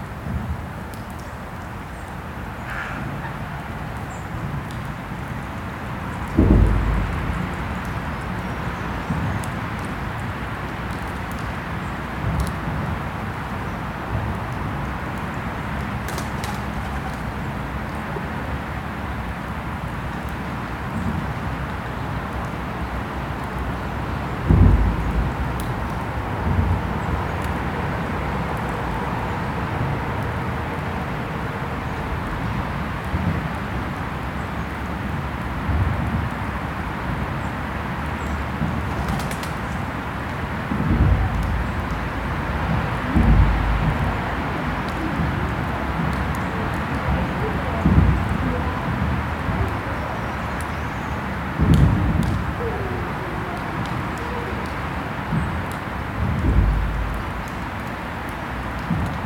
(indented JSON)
{
  "title": "Parking Garonne, Chem. de la Garonne, Toulouse, France - bridge, metalic structure 2",
  "date": "2021-11-11 15:30:00",
  "description": "pont, structure metalique, trafic, voiture, oiseaux\ngoute d'eau du pont",
  "latitude": "43.62",
  "longitude": "1.40",
  "altitude": "121",
  "timezone": "Europe/Paris"
}